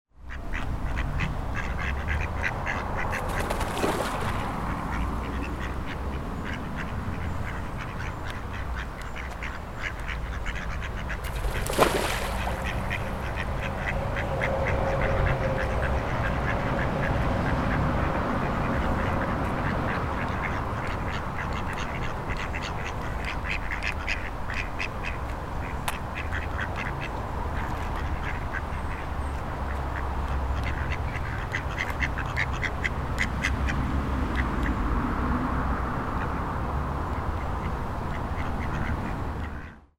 Duck Pond - Duck Pond, Ramsbottom
Ducks jumping into a pond on a warm afternoon.
England, United Kingdom, European Union, 12 June 2010